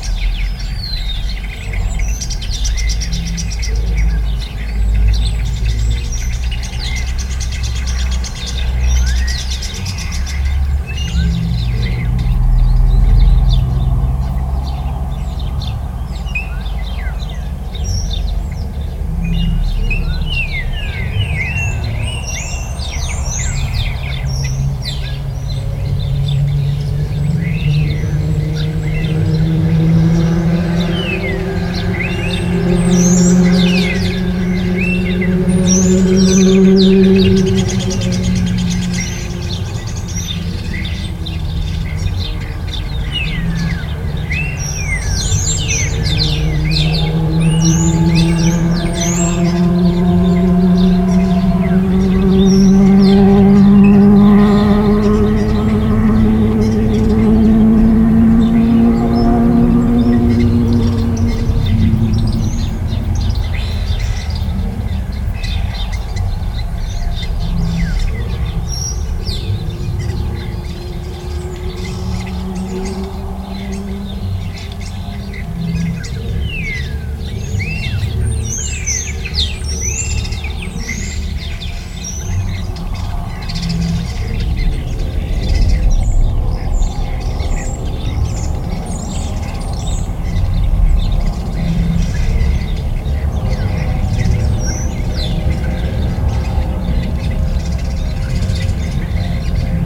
Carrer de les Eres, Masriudoms, Tarragona, Spain - Masriudoms Sant Jaume Birds
Recorded with a pair of DPA 4060s into a Marantz PMD661